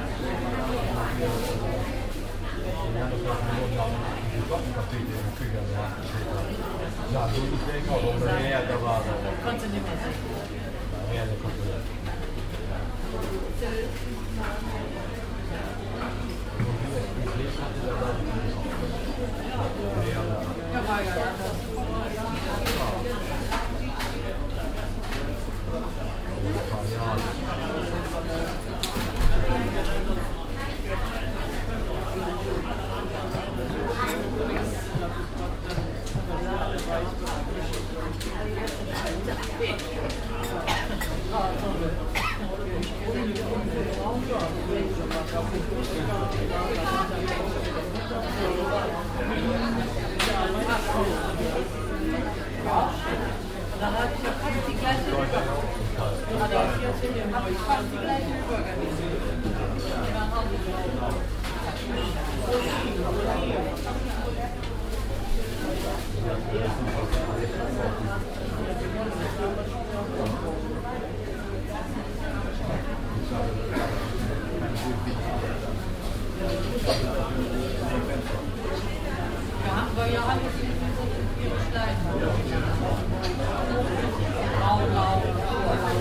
langenfeld, stadtgalerie, cafe
project: : resonanzen - neanderland - social ambiences/ listen to the people - in & outdoor nearfield recordings
19 April 2008, 10:45